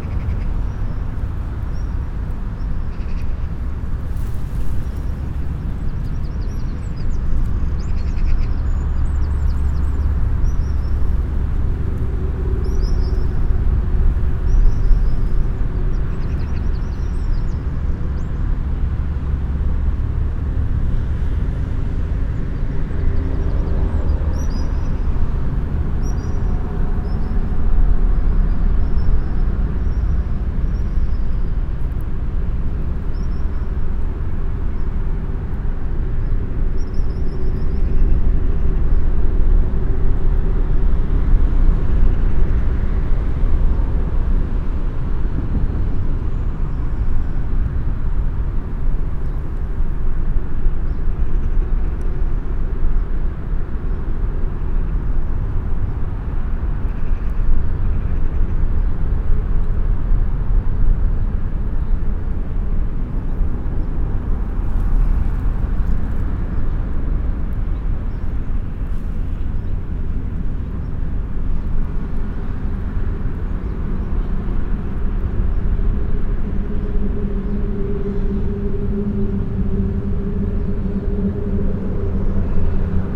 Vilvorde, Belgique - Highway overpass
The famous highway overpass called 'viaduc de Vilvorde' or 'viaduct van vilvoorde'. Recorded below the bridge, it's a very-very-very depressive place, especially by winter.